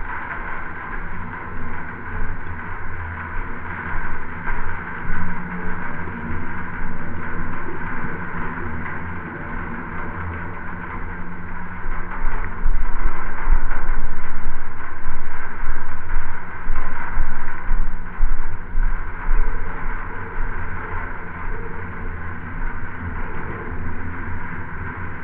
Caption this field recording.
Open air sculpture park in Antalge village. There is a large exposition of metal sculptures and instaliations. Now you can visit and listen art. Recorded with geophone and hydrophone used as contact sensor.